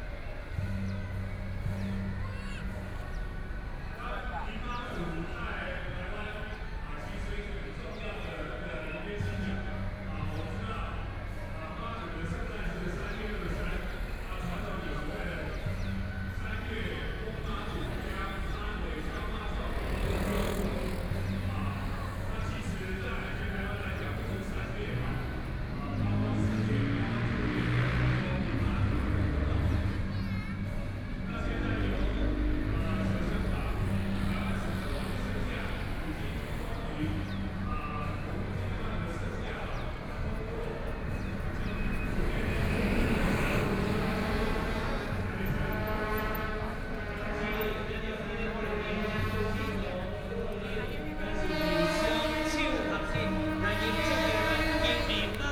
Yanping S. Rd., Taipei City - Traditional Festivals
Traditional Festivals, Mazu (goddess), Binaural recordings, Zoom H6+ Soundman OKM II